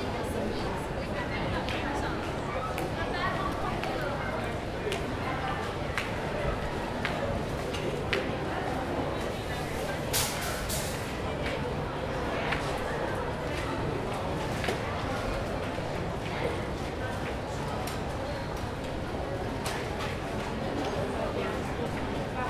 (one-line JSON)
{"title": "neoscenes: Paddys IGA checkout counter", "latitude": "-33.88", "longitude": "151.20", "altitude": "7", "timezone": "Australia/NSW"}